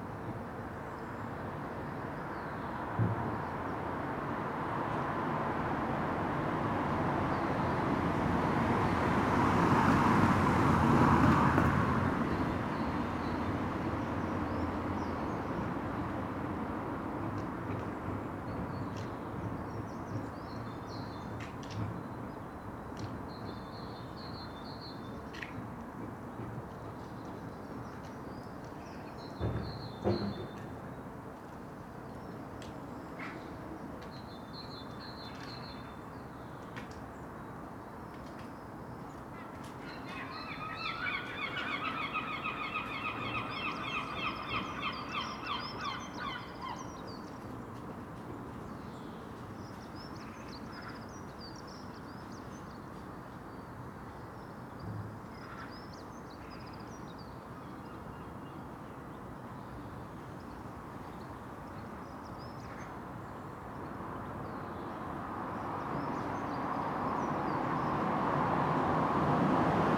Contención Island Day 51 inner northeast - Walking to the sounds of Contención Island Day 51 Wednesday February 24th
The Poplars Roseworth Avenue The Grove Yonder Cottage
Fencing contains the laurel bush gloom
The bursting twisted tracery of tree bark
A dog sniffs the gate post
Cyclist chat within the pulse of traffic